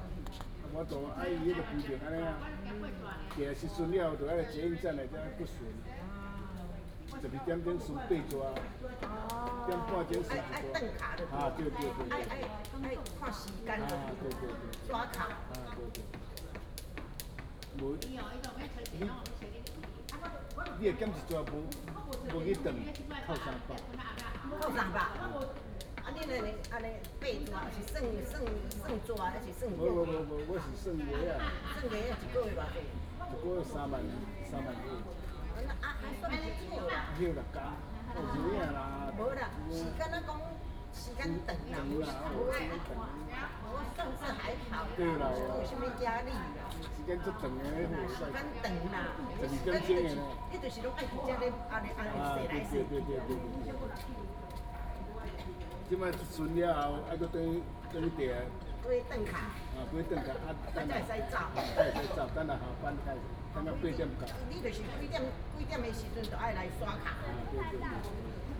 龍生公園, Da'an District, Taipei City - Children and family
Morning in the park, Children and family, The old woman in the park